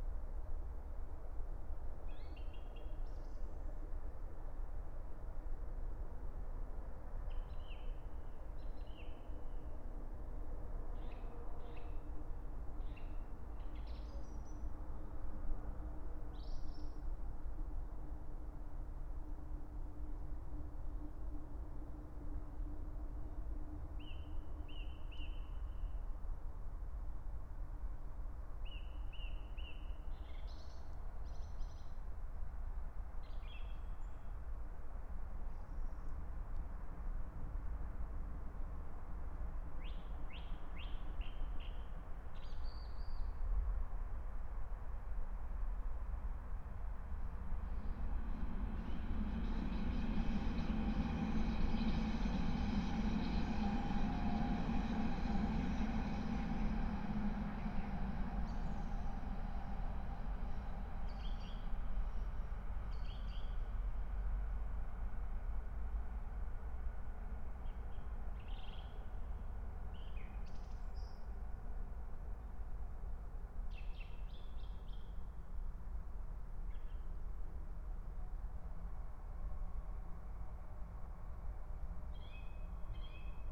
Berlin, Alt-Friedrichsfelde, Dreiecksee - train junction, early morning pond ambience, Song thrush
05:00 Berlin, Alt-Friedrichsfelde, Dreiecksee - train junction, pond ambience
Deutschland, 22 March 2022, 05:00